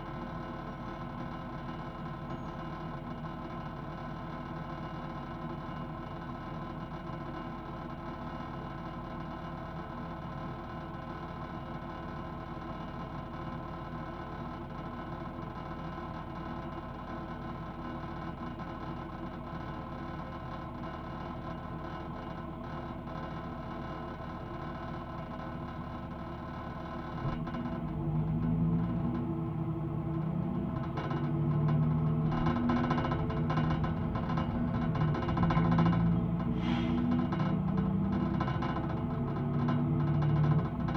Capital Metro - Freeride
Bunch of rattling
JrF Contact Mic taped to city bus seat. Recorded to 633.